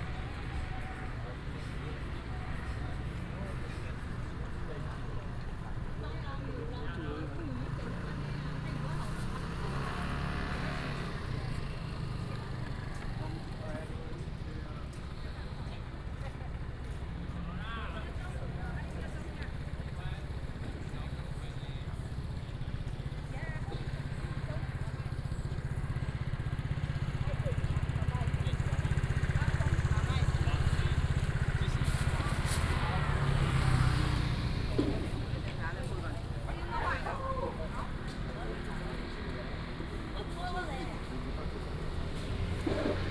Chaolong Rd., Donggang Township - Late night fishing port street
Outside the fishing port, Late night fishing port street, Traffic sound, Seafood Restaurant Vendor
Binaural recordings, Sony PCM D100+ Soundman OKM II